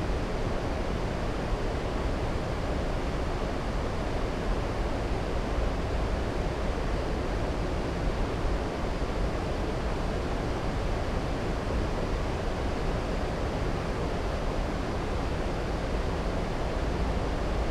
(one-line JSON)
{"title": "Thames Path, Reading, UK - Caversham Wier", "date": "2017-09-06 12:30:00", "description": "A meditation by Caversham Weir (spaced pair of Sennheiser 8020s and SD MixPre 6).", "latitude": "51.46", "longitude": "-0.96", "altitude": "37", "timezone": "Europe/London"}